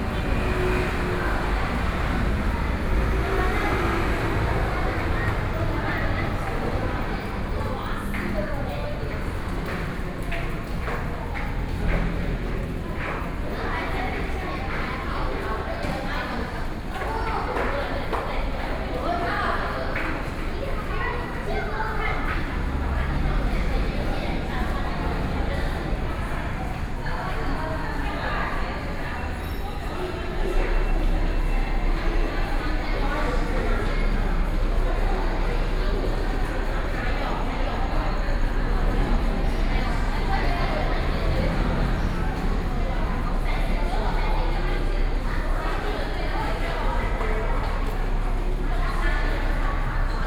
Hsinchu Station - Underpass
walking in the Underpass, Sony PCM D50 + Soundman OKM II
Dong District, Hsinchu City, Taiwan, 24 September 2013, ~19:00